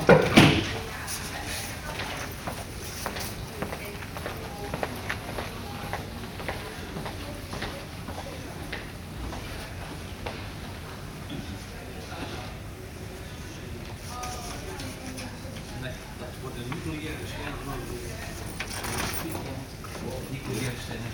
Kleiweg, Rotterdam, Netherlands - Sint Franciscus
Recorded inside Sint Franciscus Hospital using Soundman binaural microphones
Zuid-Holland, Nederland